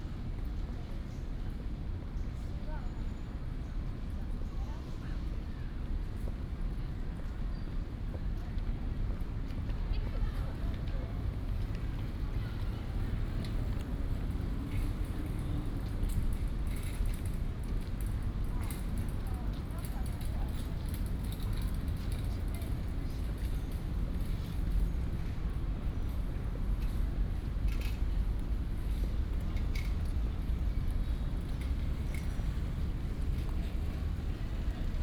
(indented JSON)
{
  "title": "舟山路, National Taiwan University - in the university",
  "date": "2016-03-04 17:32:00",
  "description": "in the university, Bicycle sound, Footsteps",
  "latitude": "25.01",
  "longitude": "121.54",
  "altitude": "15",
  "timezone": "Asia/Taipei"
}